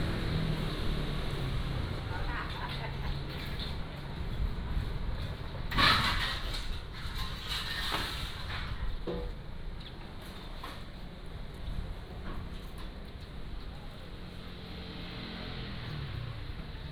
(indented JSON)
{
  "title": "Minzu Rd., Jincheng Township - Walking in the Street",
  "date": "2014-11-04 06:48:00",
  "description": "Walking in the Street, Traffic Sound",
  "latitude": "24.43",
  "longitude": "118.32",
  "altitude": "10",
  "timezone": "Asia/Taipei"
}